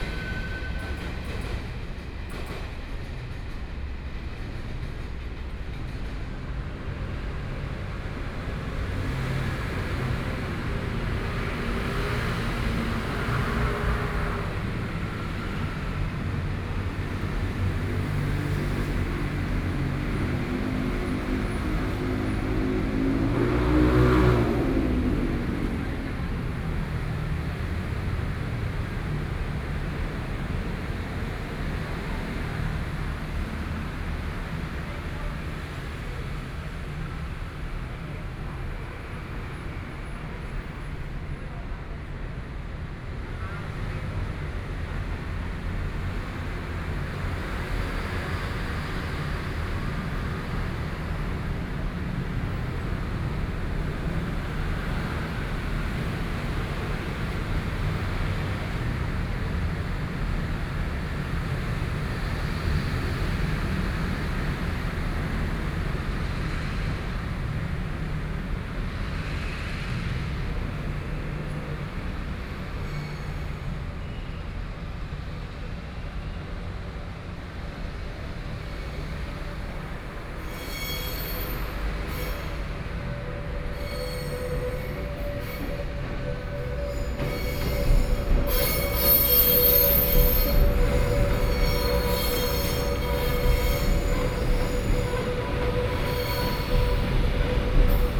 Dongda Rd., Hsinchu - Train traveling through
Train traveling through, Traffic Noise, Sony PCM D50 + Soundman OKM II